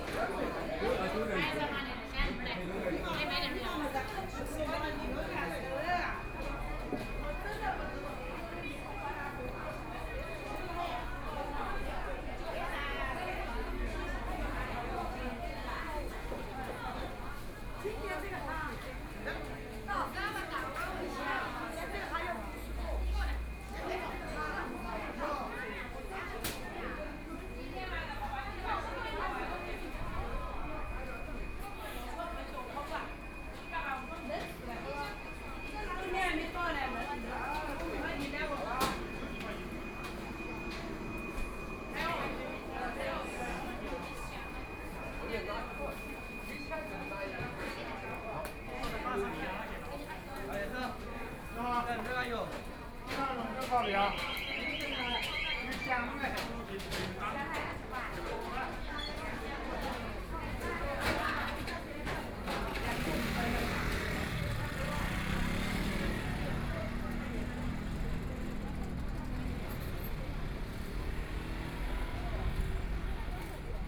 國定路, Yangpu District - Walking in the market
Walking in the market within, Binaural recording, Zoom H6+ Soundman OKM II
Shanghai, China, 22 November 2013